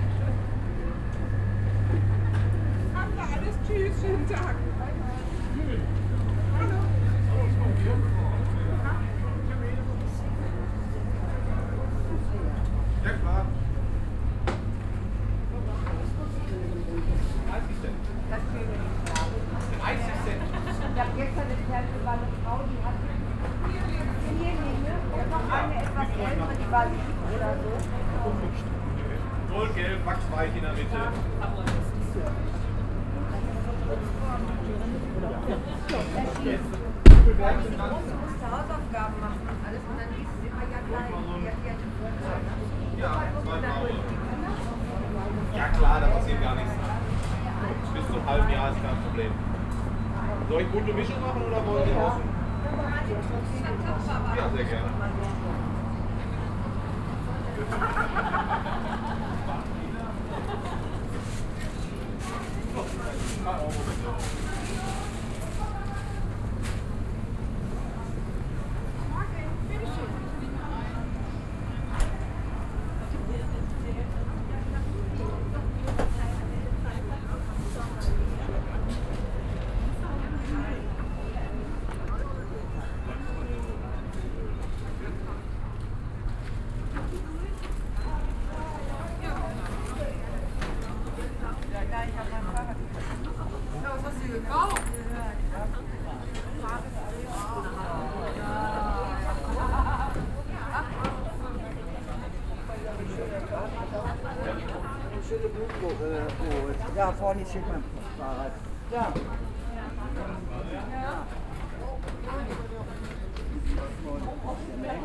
Green Market, Marktpl., Hamm, Germany - walking along stalls
walking East to West along the stalls, beginning in Oststr.
fewer stalls, fewer shoppers than other wise, every one waiting patiently in queues, chatting along…
2 April 2020, 11:40